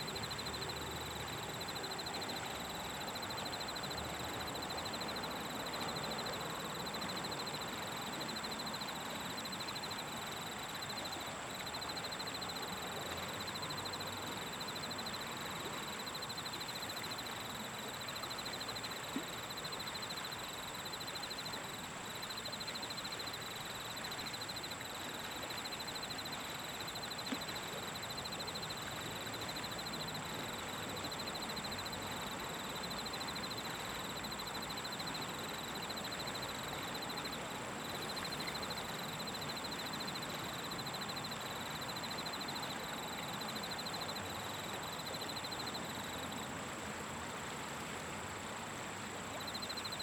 Yangjaecheon, Autumn, Crickets
양재천, 야간, 풀벌레